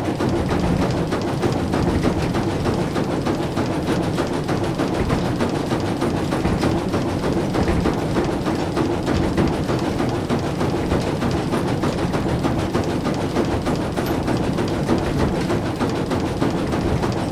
Inside the mill on the roof floor. The sound of a mechanic that is called Plansichter. Two wooden boxes that filter the floor and constantly swing on bamboo sticks.
Enscherange, Rackesmillen, Plansichter
Im Dachgeschoß der Mühle. Das Geräusch einer Konstruktion die Plansichter genannt wird. Zwei hölzerne Boxen die dazu dienen das Mehl zu filtern und mittels Unwuchtantrieb in ständiger Schwingung gehalten, an Bambusstangen befestigt sind.
Dans le moulin, à l’étage sous le toit. Le bruit du mécanisme intitulé planchister. Deux caisses en bois qui filtrent la farine en se balançant régulièrement sur des bâtons de bambou.
Enscherange, Luxembourg, 23 September 2011